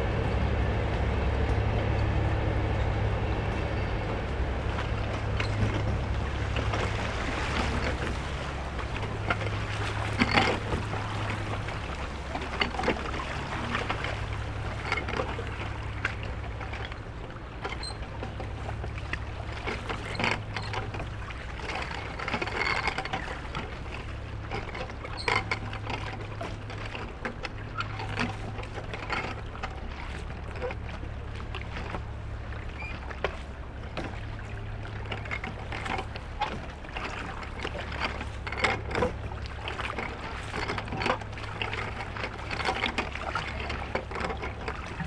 Brückenstraße, Berlin, Germany - Damm floating Mechanism - Spree - Damm floating Mechanism - Spree - Berlin
Little damm with a floating metal mechanism on the Spree river bank. Recorded with a AT BP4025 (stereo XY) into a SD mixpre6. You can here boats, water sounds, the railway station and trains on the other bank of the spree, people.